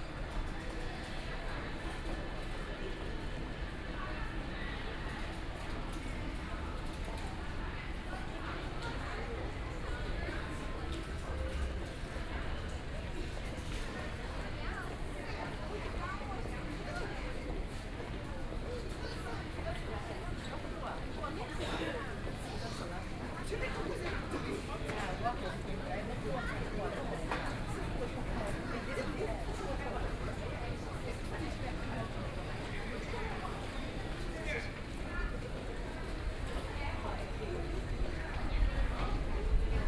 South Nanjin Road Station, Shanghai - into the Station
walking in the Station, Binaural recordings, Zoom H6+ Soundman OKM II
Huangpu, Shanghai, China, 2 December 2013, 12:58